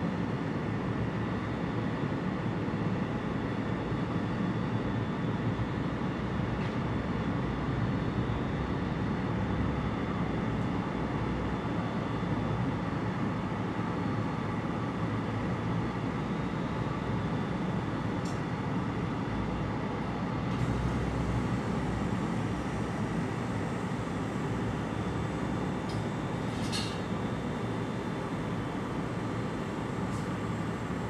Elephant & Catle, London, UK - Resting Trains
Recorded with a pair of DPA 4060s and a Marantz PMD661 — facing the London Road Tube Depot from a third story window.